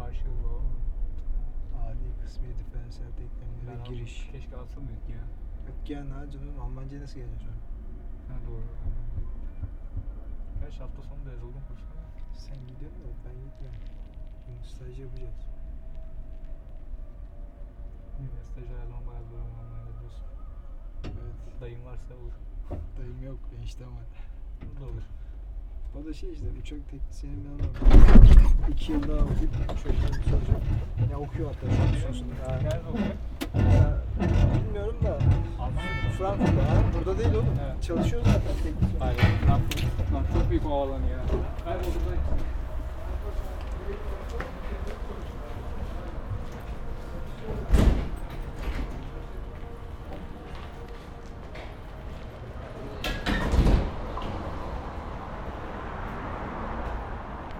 exiting the Teleferik on the other side of Macka Park
22 February 2010